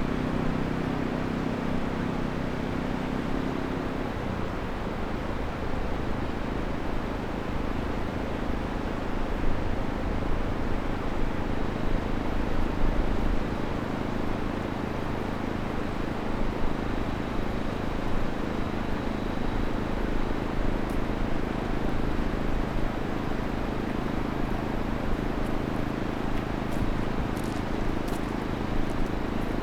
Fox Glacier, Westland-Distrikt, West Coast, Neuseeland - Intro to Fox Glacier
Who would like to go to a f***ing place like a heliport?
It's a quarter to noon on Sunday 26th Feb. 2017. The breathtaking landscape of the Southern Alps and a parking lot. A well regulated walk to the Fox Glacier with lots of signs telling you not to dos. At 00:57 a "caterpillar wheel barrow" adds more noise - totally okay on a Sunday.
Helicopters at all times!
You might not hear it, but at 11 to 16kHz there is song of a small critter.